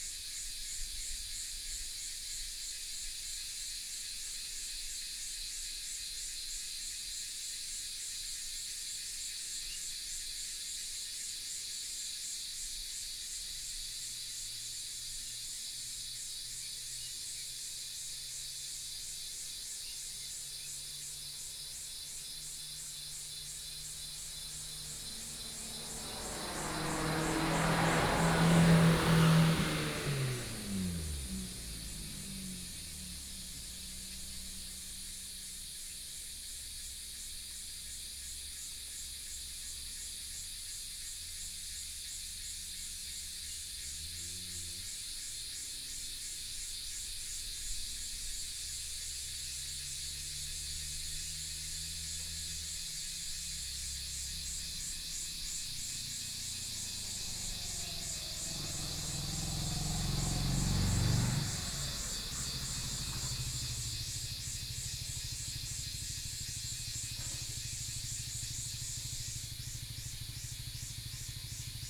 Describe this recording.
Cicadas cry, Binaural recordings, Sony PCM D100+ Soundman OKM II